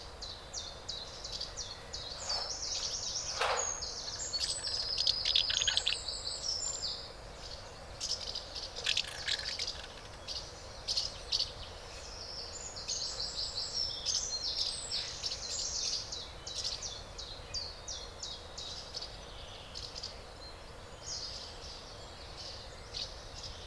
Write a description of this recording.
Swallow's nest, the adult birds feed the nestlings and other swallows fly curiously around the nest